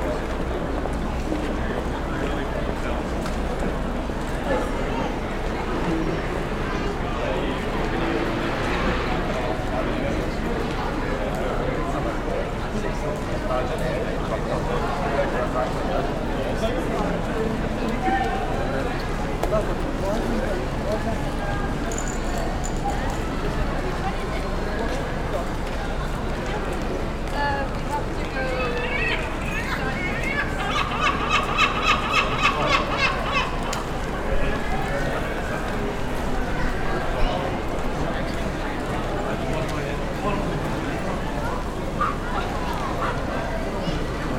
In the middle of a large crossing point for shoppers, it seems as if the idea has been to try to return things to normal in our daily lives. However, looking around you see some frightened by the current epidemic — wearing masks continuously outdoors, others removing after exiting a store and others have them stowed away in their jacket or bag. Months ago, when the lockdown began, this area had a large difference in sonic characteristics, as people have returned there is a returning sound of congestion by our human presence, we fill the gaps between the industrial and natural sound environment generated in these types of places.
28 August, 16:00